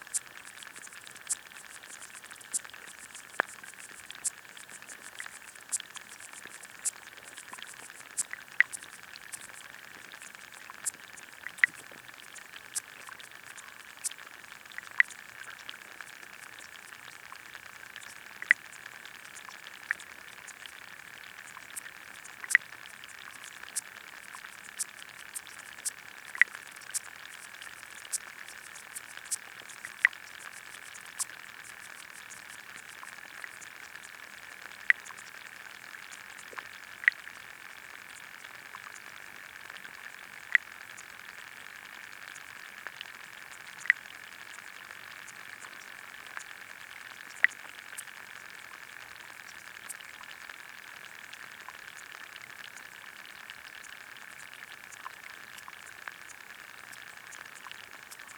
Elgar Rd S, Reading, UK - lagoa dos Mansos
hidrophone recording at Lagoa dos Mansos